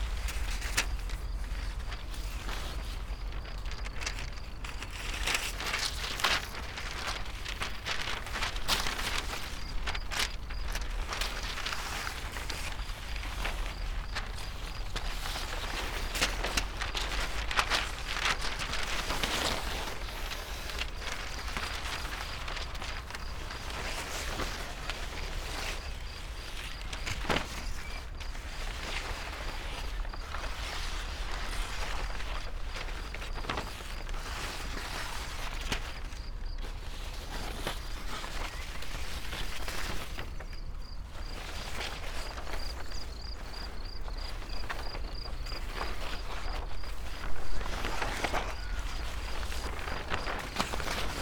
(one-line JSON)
{"title": "path of seasons, vineyard, piramida - wind unfolds scroll books", "date": "2014-05-30 17:04:00", "description": "unfolded book, attached to the vineyard wires, wind playing them, another scroll lying in high grass", "latitude": "46.57", "longitude": "15.65", "altitude": "309", "timezone": "Europe/Ljubljana"}